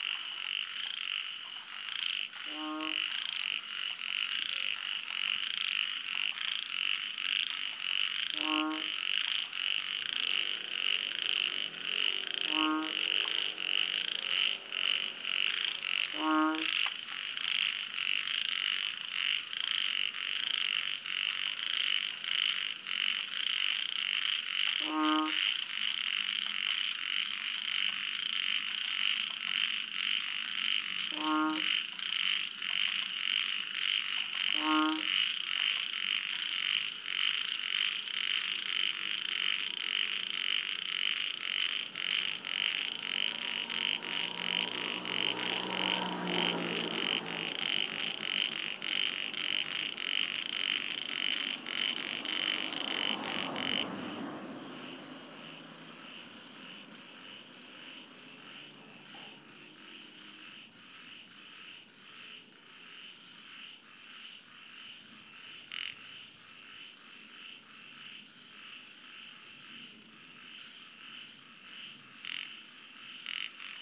Chorus of three species of frogs, Microhyla fissipes, Polypedates braueri and Lithobates catesbeiana, recorded in a countryside road near a Lichi fruit plantation, at the elevation of 100m.